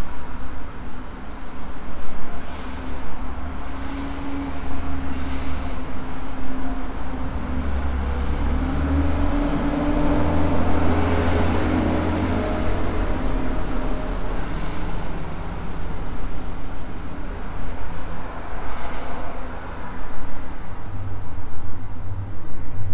atelierhaus salzamt: offenes atelierfenster, sirenenprobe
10 January 2015, 12:00